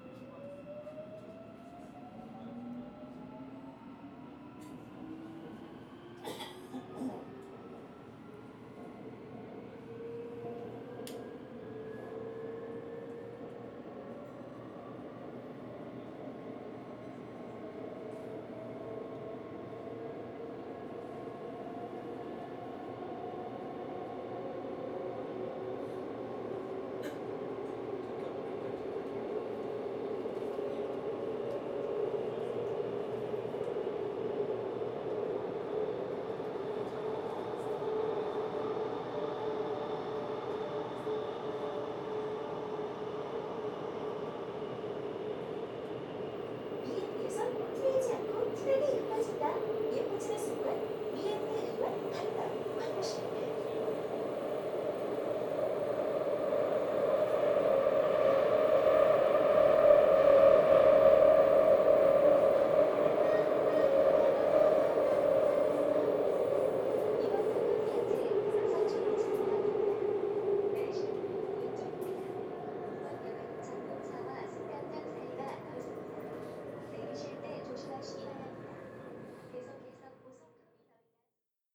대한민국 서울특별시 양재동 시민의숲.양재꽃시장 - Yangjae Citizens Forest Station
Yangjae Citizens Forest Station, Subway Shinbundang line
양재시민의숲역 신분당선